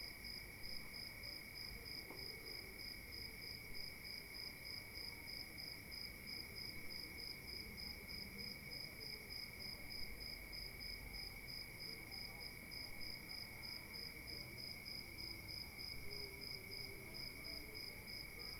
CILAOS Réunion - 20200211 1936-2010 CILAOS
20200211_19H36 À 20H10_CILAOS
CHANTS DES GRILLONS DÉBUT DE NUIT D'ÉTÉ
Saint-Pierre, La Réunion, France, 11 February 2020, 19:36